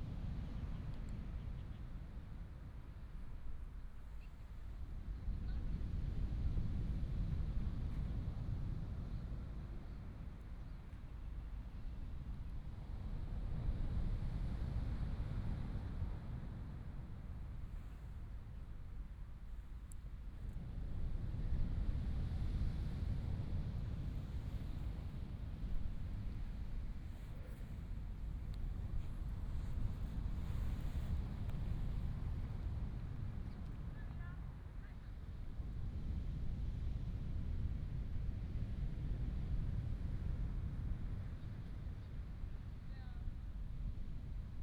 At the beach, Sound of the waves
Binaural recordings, Sony PCM D100+ Soundman OKM II
14 March 2018, 11:34